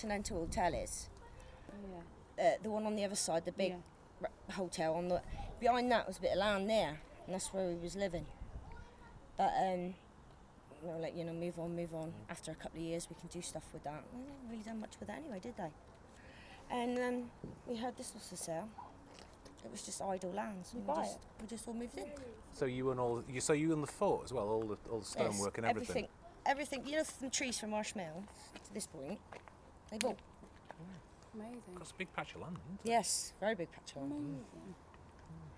Efford Walk One: More about the Showmans Guild - More about the Showmans Guild
Plymouth, UK, 14 September 2010, 08:28